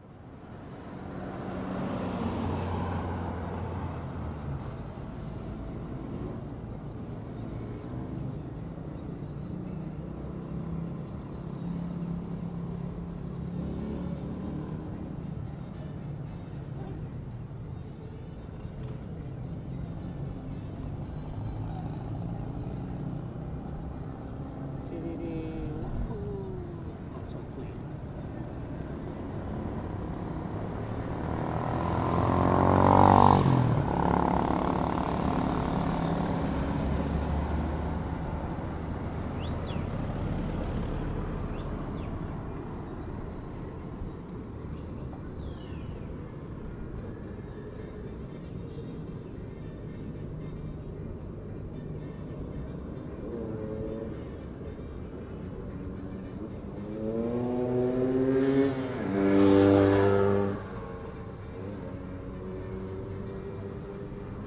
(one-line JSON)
{"title": "San Jacinto de Buena Fe, Ecuador - Buena Fe main street.", "date": "2016-03-02 16:30:00", "description": "While waiting the bus. Tascam DR100", "latitude": "-0.89", "longitude": "-79.49", "altitude": "108", "timezone": "America/Guayaquil"}